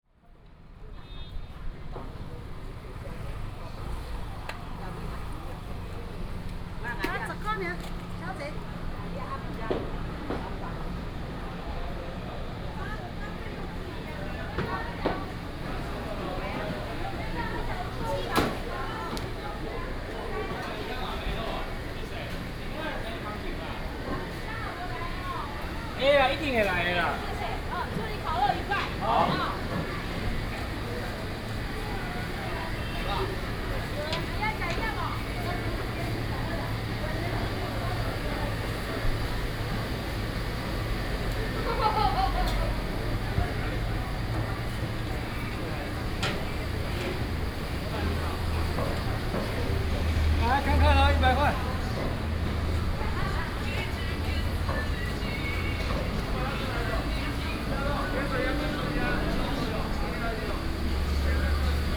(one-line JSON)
{"title": "平鎮黃昏市場, Pingzhen Dist. - Traditional market", "date": "2017-08-04 16:31:00", "description": "Traditional market, Traffic sound", "latitude": "24.91", "longitude": "121.21", "altitude": "176", "timezone": "Asia/Taipei"}